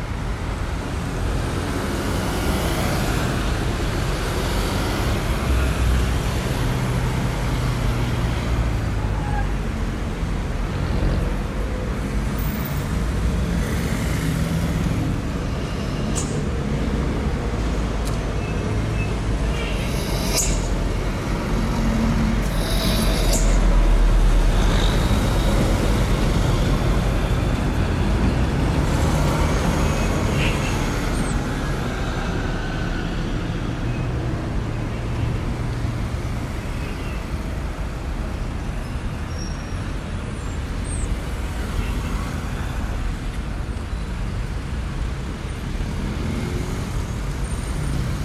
Ak, Bogotá, Colombia - Gasolinera Autopista 30
This is the only gas station that can be found in the San Miguel neighborhood, it is an open and comfortable space for the movement of cars. This gas station is located right on Highway 30, therefore, the fundamental sound found is loud traffic, on this highway all kinds of vehicles pass, especially those with heavy loads. The most recognizable sound signals of the place are the passage of ambulances, the passage of motorcycles and other vehicles. A lot of people gather in this space because there is a bus stop, but they cannot be heard because the sound emanating from the cars is louder. As a sound signal we find the noise that the pavement makes when it shakes when quite heavy vehicles pass, and also the sound of the metal covers of the aqueduct and the pipes that are under the gas station.